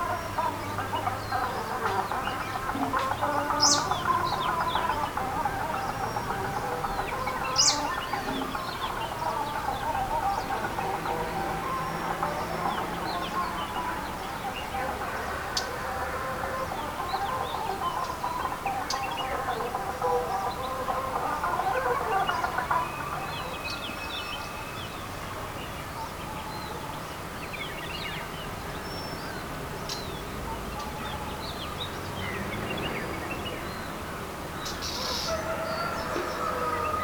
Nullatanni, Munnar, Kerala, India - dawn in Munnar - over the valley 5
dawn in Munnar - over the valley 5